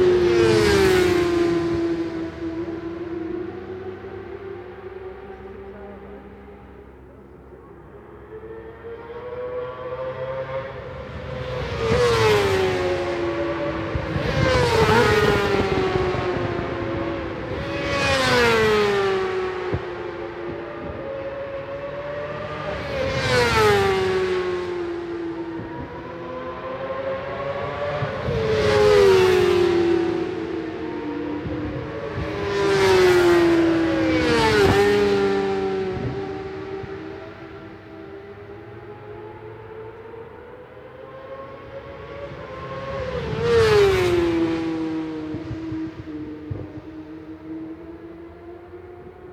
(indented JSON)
{
  "title": "Brands Hatch GP Circuit, West Kingsdown, Longfield, UK - british superbikes 2004 ... supersports ...",
  "date": "2004-06-19 14:15:00",
  "description": "british superbikes 2004 ... supersports 600s qualifying two ... one point stereo mic to minidisk ...",
  "latitude": "51.35",
  "longitude": "0.26",
  "altitude": "151",
  "timezone": "Europe/London"
}